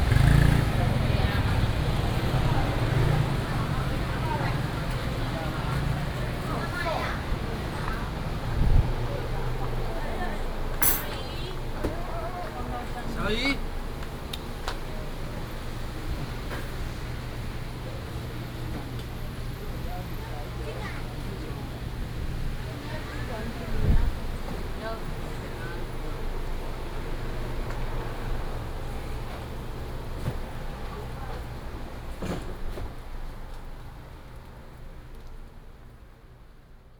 東港陸橋, 宜蘭市小東里 - In the bridge of the night market
Night market, In the bridge of the night market, Traffic Sound, Very hot weather